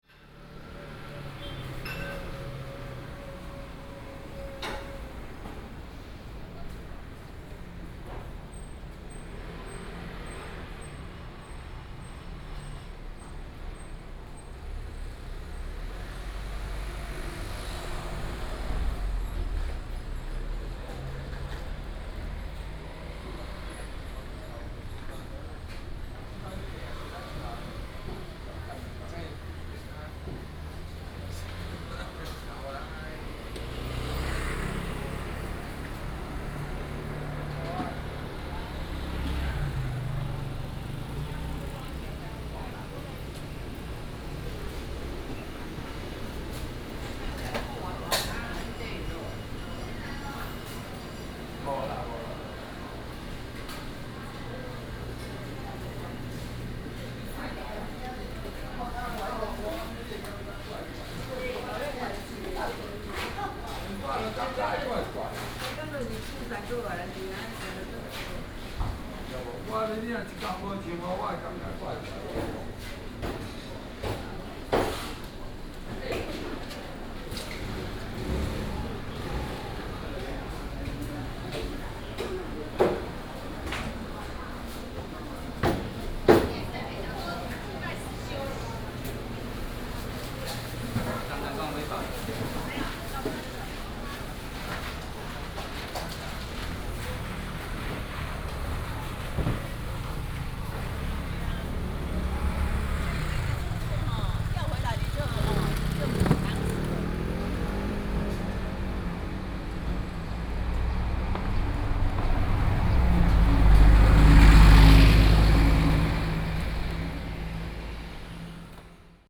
Chaguan St., Banqiao Dist., New Taipei City - Walking in a small alley
Walking in a small alley, Walking through the market
Please turn up the volume a little. Binaural recordings, Sony PCM D100+ Soundman OKM II
New Taipei City, Taiwan, 31 July 2015, 07:22